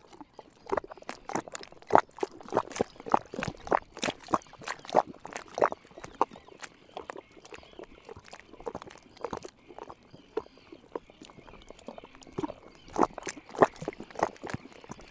La Couarde-sur-Mer, France - Salt marshes
Sound of the water lapping, into the salt marshes. At the backyard, Pied Avocet and Black-headed Gulls.